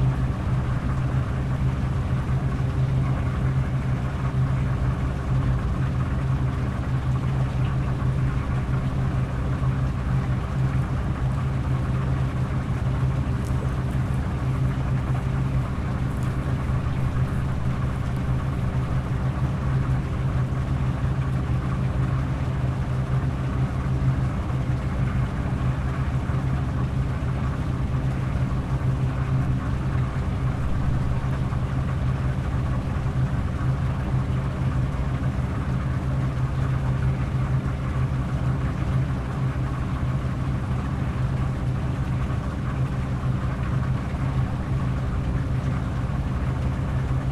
Lithuania, Antaliepte, at hydro power plantat
small hydro power plant, one tube is leaky, so theres a sound of water mixed with roaring of water pump